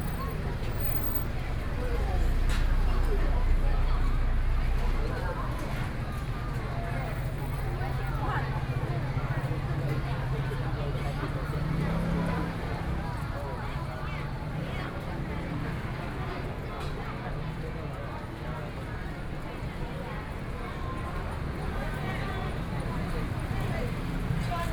Zhongzheng Rd., Tamsui District - walking on the Road
walking on the Road, Many tourists, Various shops voices
Please turn up the volume a little. Binaural recordings, Sony PCM D100+ Soundman OKM II
Danshui District, New Taipei City, Taiwan, 5 April 2014